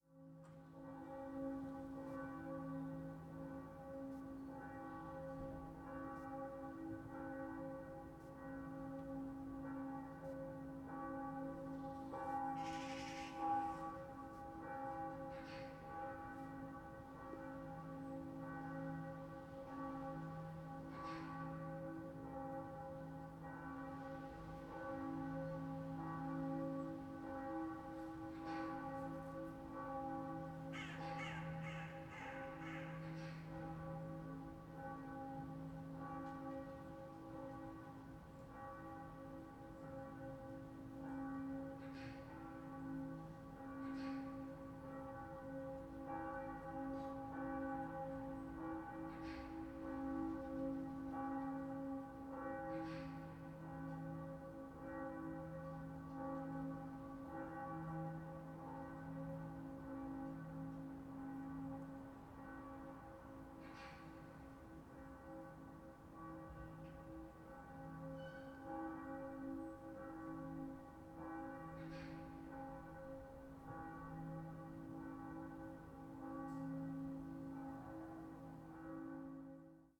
Berlin Bürknerstr., backyard window - distant sunday churchbells
07.12.2008 10:50, sunday church bells, winter morning
Berlin, Germany